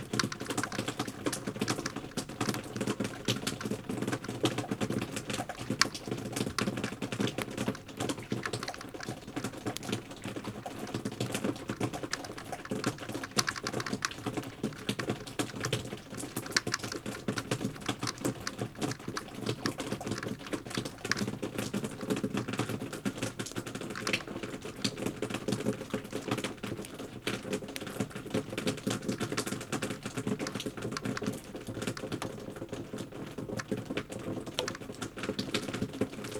berlin, friedelstraße: hinterhof - the city, the country & me: backyard
melt water dripping from the roof
the city, the country & me: january 28, 2013